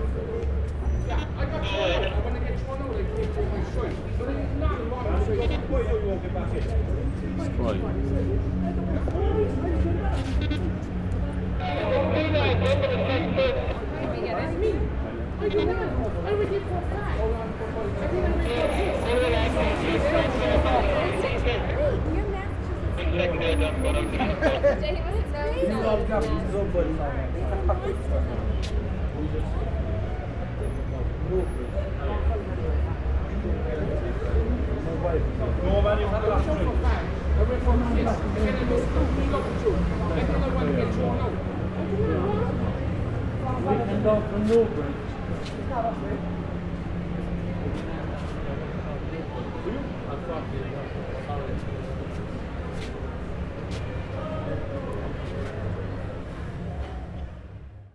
Centre, Cork, Co. Cork, Ireland - The Sunken Hum Broadcast 26 - Cork Night Outside the Old Oak
Standing outside of The Old Oak, a pub on Oliver Plunkett Street in Cork City. Catching tidbits of drunken chatter with a Zoom H4.